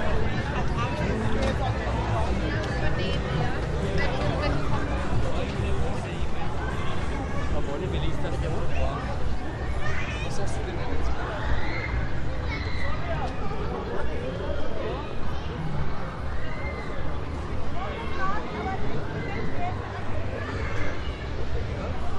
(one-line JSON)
{"title": "wien-stadlau, wheel of fortune", "date": "2010-09-24 17:06:00", "description": "wheel of fortune at the stadlauer kirtag annual fair 2010", "latitude": "48.22", "longitude": "16.45", "altitude": "156", "timezone": "Europe/Vienna"}